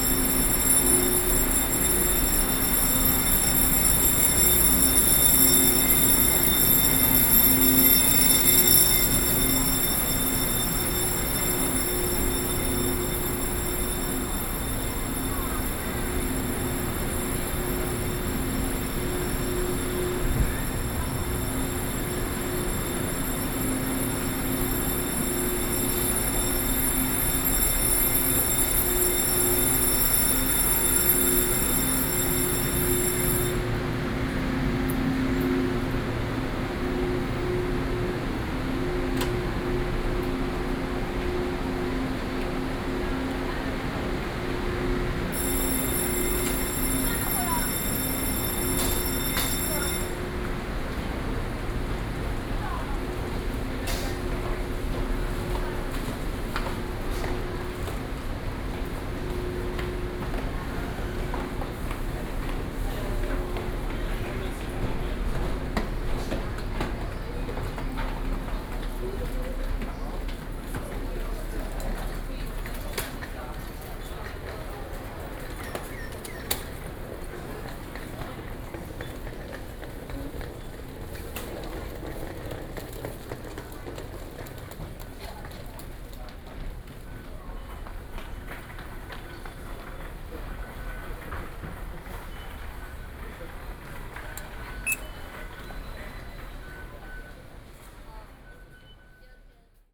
Taipei, Taiwan - Taipei Main Station
Train broadcasting, walking in the Railway platforms, Sony PCM D50 + Soundman OKM II